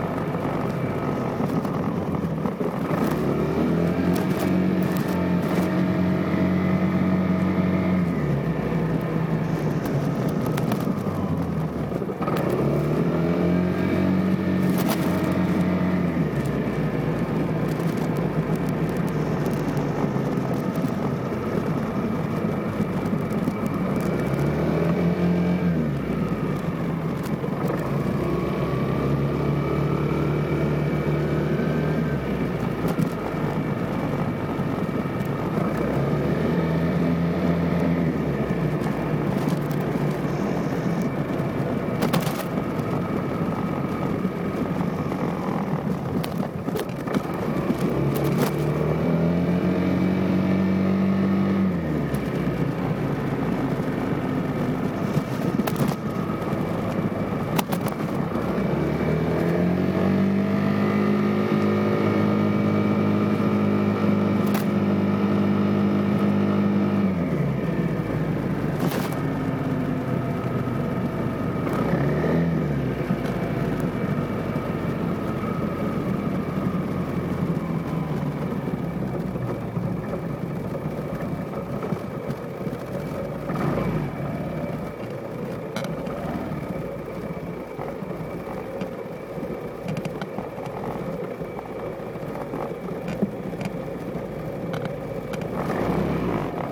Riding home from work on Vespa